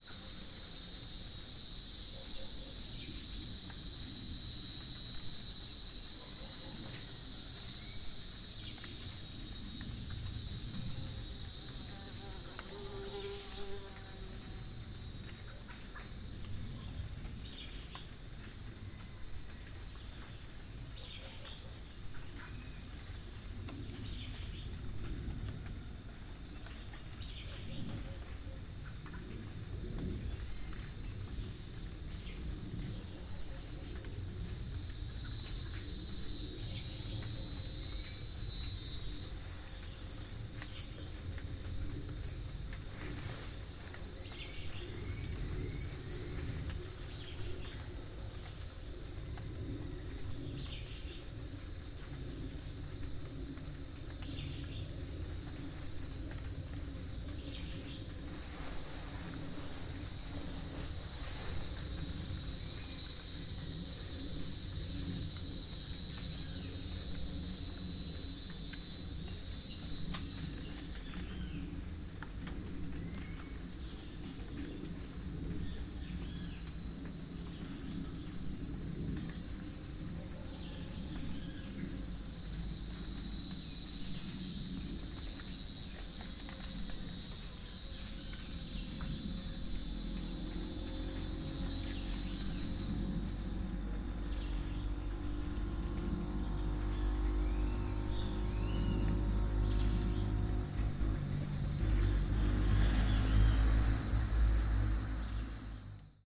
Itanhangá, Rio de Janeiro - State of Rio de Janeiro, Brazil - cicadas
zoom h4n recording cicadas at the summer